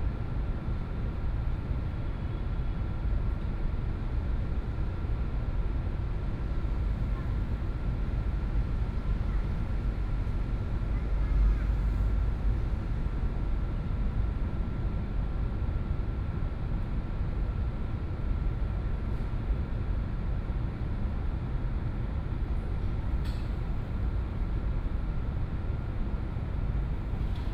ZhongAn Park, Taipei City - Noise
Environmental Noise, Night in the park
Please turn up the volume a little
Binaural recordings, Sony PCM D100 + Soundman OKM II
28 February, 19:18